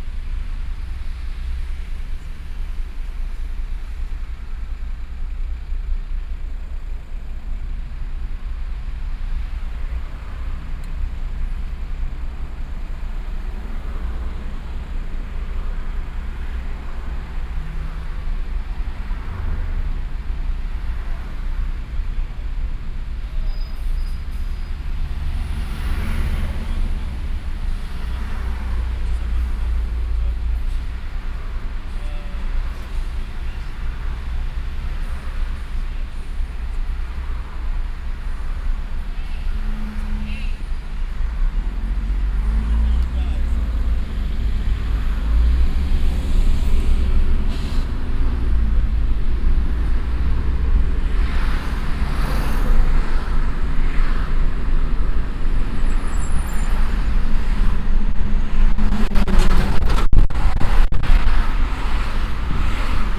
cologne, innere kanalstrasse, stadtauswärts im verkehr
innere kanalstrasse stadtauswärts - nach köln nord - verkehr unter zwei unterführungen- nachmittags - auf innerer kanal strasse - parallel stadtauswärts fahrende fahrzeuge - streckenaufnahme teil 04
soundmap nrw: social ambiences/ listen to the people - in & outdoor nearfield recordings